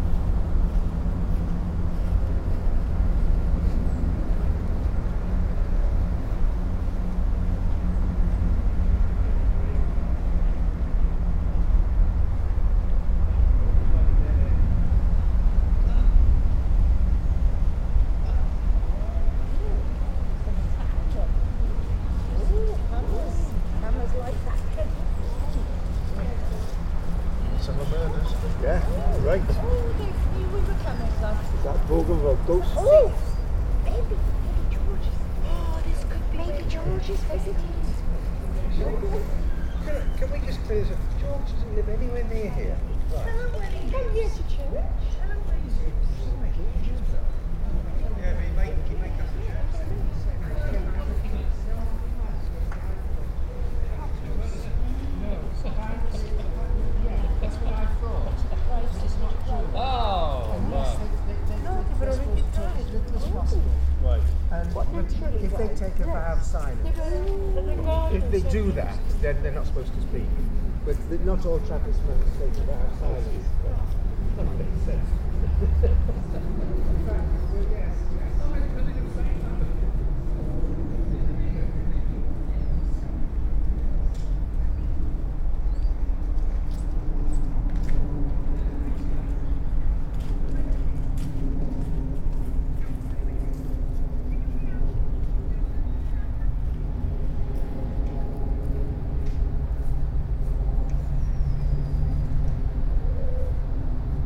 Birdsong, wind in the surrounding trees, the rumble of traffic crossing the nearby toll bridge, trains passing along the mainline to London, aircraft and a group of ramblers (Spaced pair of Sennheiser 8020s on a SD MixPre6).